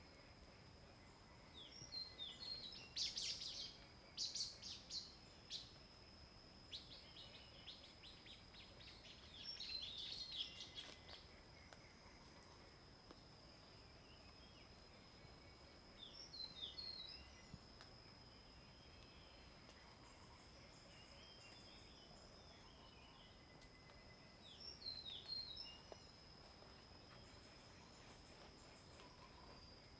三角崙, 埔里鎮桃米里 - Birds and Frogs sound
Birds singing, face the woods
Zoom H2n MS+ XY